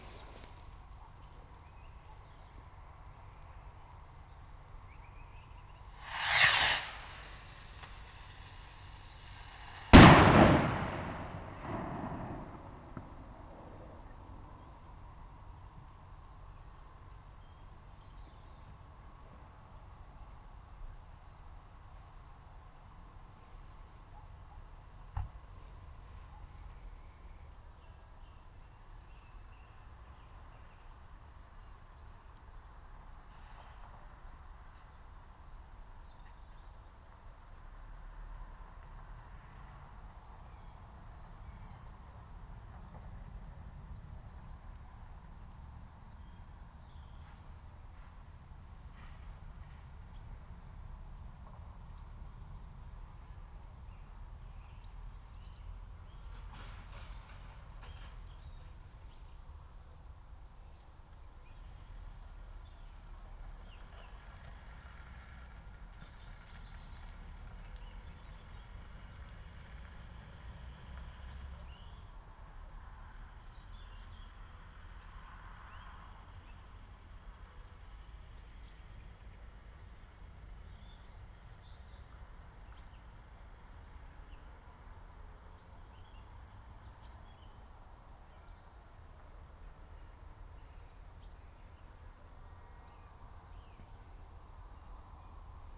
{"title": "Luttons, UK - Another ... other ... rocket ...", "date": "2017-02-06 08:00:00", "description": "Bird scarer rocket ... set off over woodland ... probably to keep wood pigeons from roosting in the area ... open lavalier mics clipped to a hedgerow ...", "latitude": "54.12", "longitude": "-0.57", "altitude": "98", "timezone": "GMT+1"}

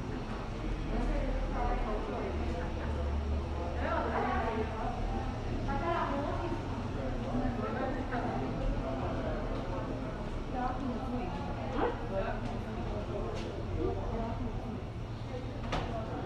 {"title": "calle, CC.Los molinos, Medellín, Antioquia, Colombia - Ambiente Centro Comercial", "date": "2021-10-28 17:23:00", "description": "Información Geoespacial\n(latitud: 6.233051, longitud: -75.604038)\nCentro Comercial Los Molinos\nDescripción\nSonido Tónico: Gente hablando, pasos\nSeñal Sonora: Arreglos en una construcción\nMicrófono dinámico (celular)\nAltura: 40 cm\nDuración: 3:01\nLuis Miguel Henao\nDaniel Zuluaga", "latitude": "6.23", "longitude": "-75.60", "altitude": "1537", "timezone": "America/Bogota"}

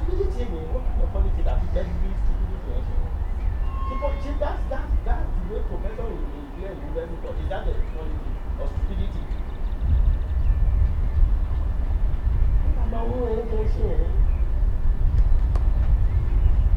{"title": "Gremberghoven, Köln, Deutschland - Morgens / Morning", "date": "2014-07-03 06:10:00", "description": "Köln Steinstraße S-Bahnhaltestelle - Mann spricht mit Telefon - Güterzug startet - Vögel /\nCologne Steinstraße littel Station - Man talking with cellular phone - freight train starts - Birds", "latitude": "50.90", "longitude": "7.06", "altitude": "53", "timezone": "Europe/Berlin"}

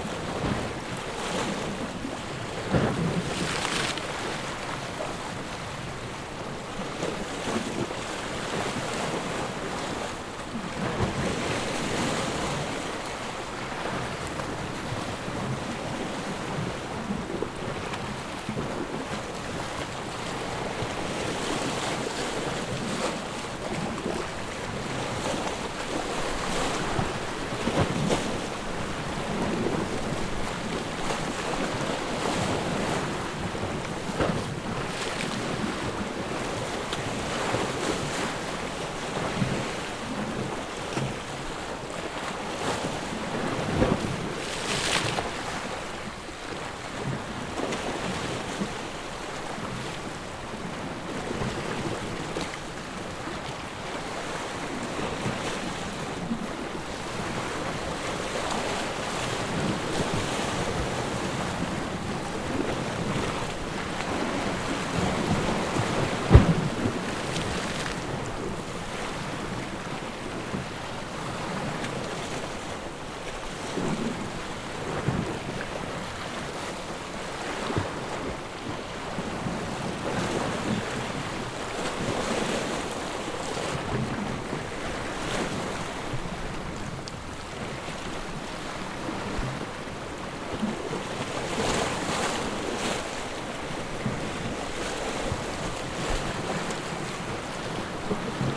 {"title": "Carrer Costa den Josep Macià, 5, 17310 Lloret de Mar, Girona, Испания - Sea hitting big rock", "date": "2018-09-06 16:25:00", "description": "Sea hitting a big rock plato, splashes, rare distance spanish voices.", "latitude": "41.70", "longitude": "2.86", "timezone": "Europe/Madrid"}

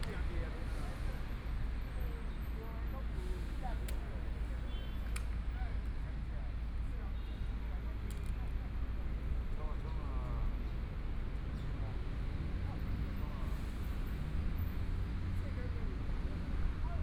{"title": "榮星花園公園, Zhongshan District - Chess and Traffic Sound", "date": "2014-01-20 15:36:00", "description": "Old man playing chess and Dialogue among the elderly, Traffic Sound, Binaural recordings, Zoom H4n+ Soundman OKM II", "latitude": "25.06", "longitude": "121.54", "timezone": "Asia/Taipei"}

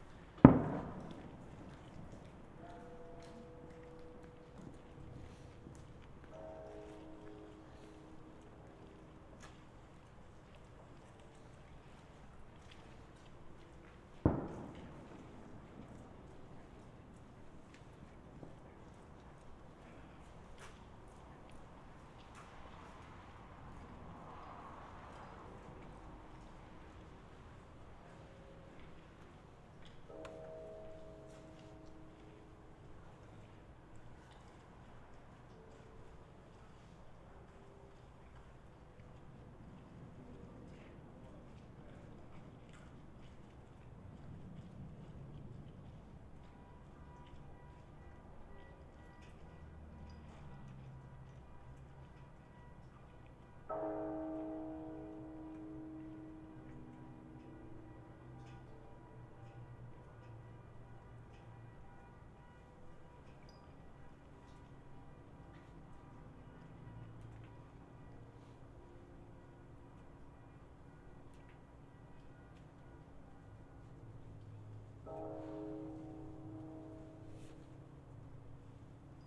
{"title": "Takano, Ritto, Shiga Prefecture, Japan - New Year 2015 Temple Bells and Fireworks", "date": "2015-01-01 00:27:00", "description": "New Year temple bells and fireworks, beginning just before 1 January 2015. The recording was trimmed with Audacity on CentOS (Linux). No other processing was done. Max amplitude -2.2dB was preserved as-is from the recorder.", "latitude": "35.03", "longitude": "136.02", "altitude": "109", "timezone": "Asia/Tokyo"}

